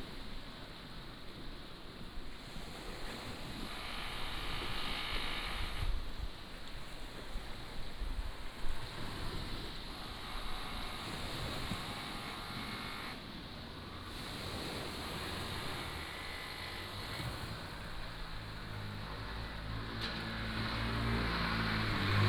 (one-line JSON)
{"title": "Ren'ai Rd., Nangan Township - On the embankment", "date": "2014-10-14 13:56:00", "description": "Sound of the waves, Construction sound, small village", "latitude": "26.14", "longitude": "119.92", "altitude": "13", "timezone": "Asia/Taipei"}